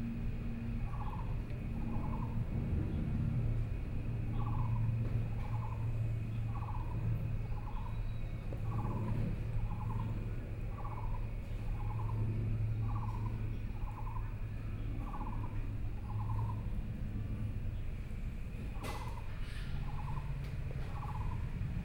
碧湖公園, Taipei City - Parks and Community
Frogs sound, Insects sound, Aircraft flying through, Traffic Sound
Taipei City, Taiwan, 4 May 2014